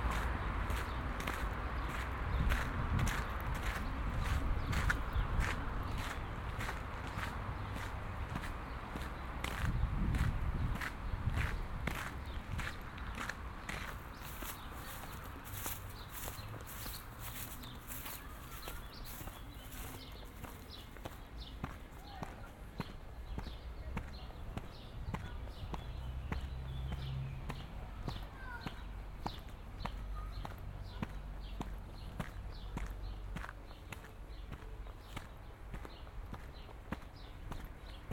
soundwalk Elsenstr. - Lohmühle - old railway track
walking along an (possibly) old railway stretch from Elsenstr. to Lohmühlenufer on a warm summer sunday evening, 10m above the normal city level. (binaural recording, use headphones)
Berlin, Germany, June 2010